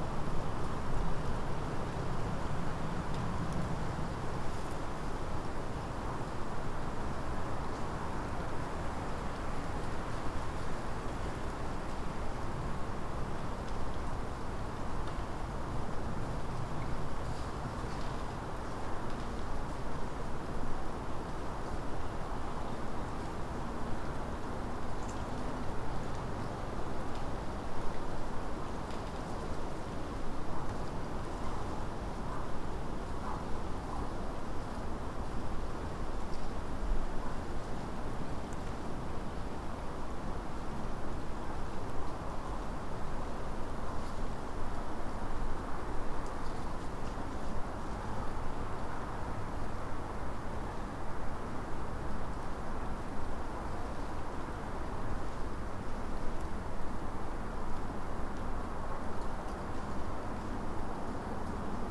Late evening recording at Birchen Copse, Woodcote. The bleats of sheep from a nearby farm, owls some way off, the creaking of trees and rustling movements of small animals in the bushes close-by, heard over a shifting drone of cars on the nearby A4074, trains on the Reading-Oxford mainline and planes passing high overhead. Recorded using a spaced pair of Sennheiser 8020s at 3m height on an SD788T.